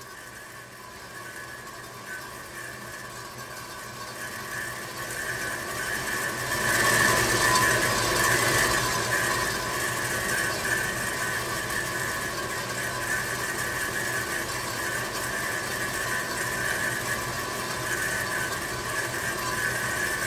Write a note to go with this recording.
Here the sound of a process that is described with the german word: anschwänzen - translation says: sparging - but I am not so sure. In this process the brew master fills in water inside the brewing tank from inside thru a kind of shower. Heinerscheid, Cornelyshaff, Brauerei, anschwänzen, Hier das Geräusch von dem Prozess, der mit dem deutschen Wort "anschwätzen" beschrieben wird. Dabei füllt der Braumeister Wasser in den Brautank durch eine interne Dusche. Heinerscheid, Cornelyshaff, aspersion, Maintenant le bruit d’un processus qui porte en allemand le nom de : anschwänzen – la traduction est : asperger. Au cours de ce processus, le maître brasseur injecte de l’eau à l’intérieur de la cuve de brassage par une sorte de douche.